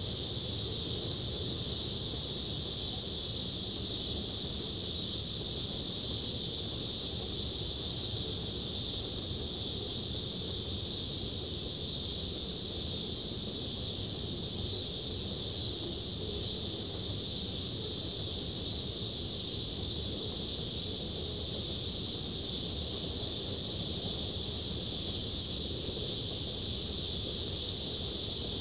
Des Plaines, IL, USA - Cicada Swarm (with trains & planes)
Recorded just a few miles from Chicago's O'Hare airport, this clip showcases a huge swarm of cicadas buzzing away in the middle of a Summer afternoon. This was made on a side street near a large schoolyard on a hot July day with little wind or interference. I used a Tascam Dr-07 with wind screen. You can also hear a nearby train and a few planes approaching the airport.